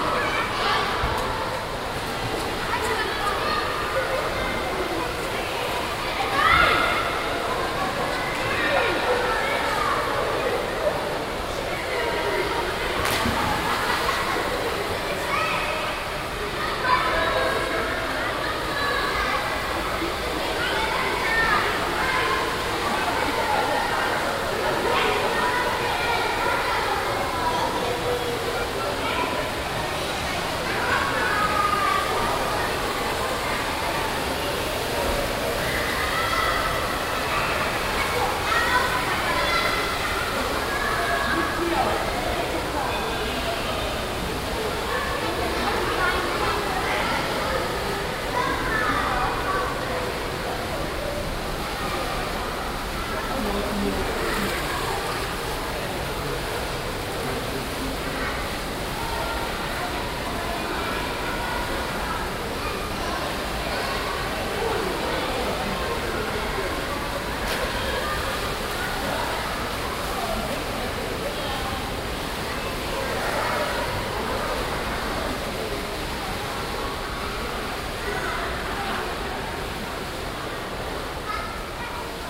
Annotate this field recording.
soundmap: refrath/ nrw, refrath, mediterana hallenbad, letzte badgeräusche vor dem umbau der alten hallenanlage im juli 2008, project: social ambiences/ listen to the people - in & outdoor nearfield recordings